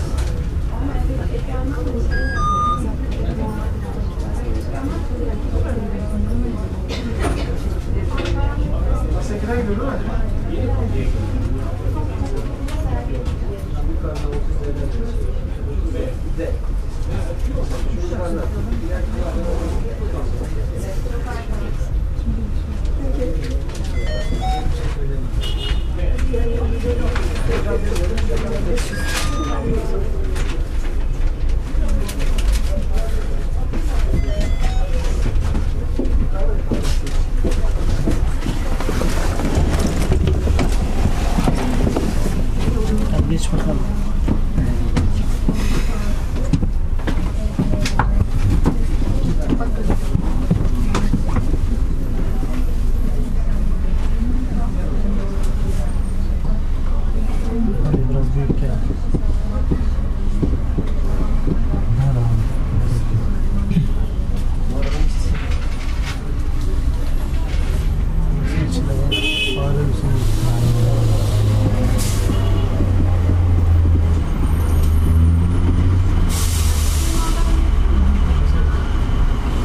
Istanbul, Besşiktaş, bus waiting to get packed
If Istanbul is an organism, the streets of Istanbul are the veins of the city. Its blood pressure is very low though. The vehicles are slow and bulky, the roads are often narrow and clogged. The bus, a major corpuscle, is waiting to get entirely packed with passengers, so that all capacities are fully in use. You will find a very complex and dense cell structure in the interior of the vehicle. Once you cannot move anymore, the bus may go on its way.